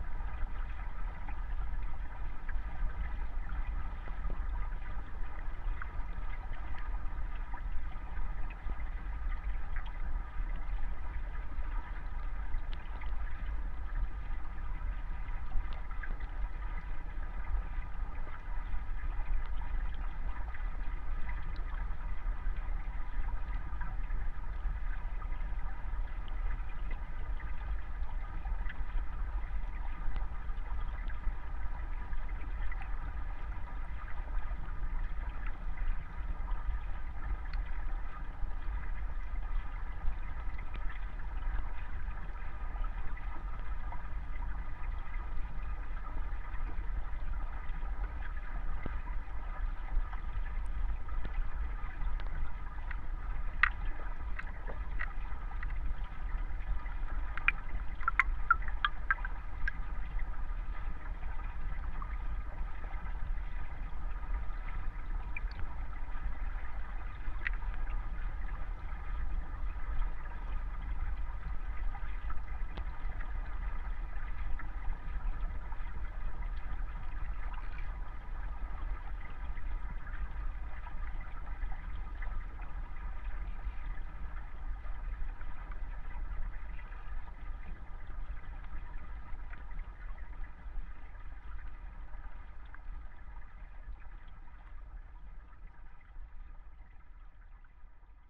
Vyzuonos, Lithuania, at the river and under the river
little waterfall at the river and the second part of recording is underwater recording of the same place